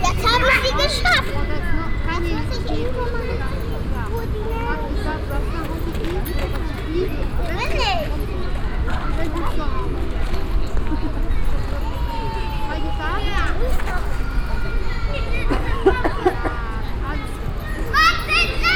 essen, city park, playground
At a children playground in the city park in the early evening in late spring time.
Projekt - Klangpromenade Essen - topographic field recordings and social ambiences
Essen, Germany, 9 June 2011, ~23:00